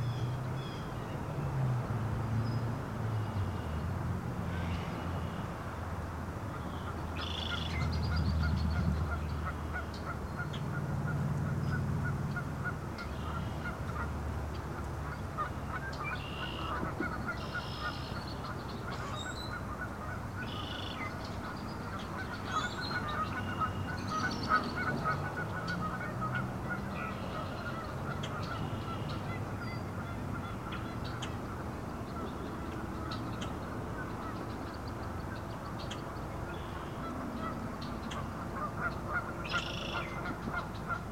Mouth of the White River, W. Hanson St. near Thompson St., Whitehall, MI, USA - Saturday Serenade
A large variety of waterfowl and songbirds call to each other. A family passes by, including two children with scooters, headed to one of the many nearby parks. To the west, across White Lake, Montague's fire siren sounds to mark 12 noon. Stereo and shotgun mics (Audio-Technica, AT-822 & DAK UEM-83R), recorded via Sony MDs (MZ-NF810 & MZ-R700, pre-amps) and Tascam DR-60DmkII.
30 April, 11:55